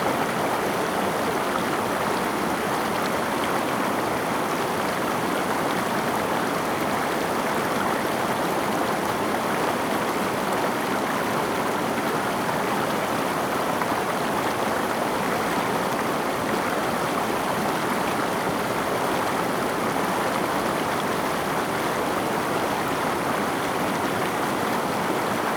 白鮑溪, Shoufeng Township - sound of water streams
sound of water streams, The weather is very hot
Zoom H2n MS+ XY